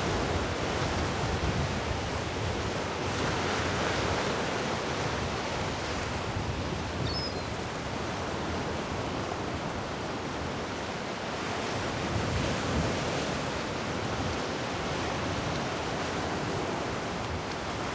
Porto, west corner of the city, at the beach - angler in the wind
a short walk on the windy beach. muscular waves slash at the sand. many terns sitting around, crying out occasionally. two anglers shouting to each other. wind shredding the words, they finally let it slide as the wind is too strong to communicate.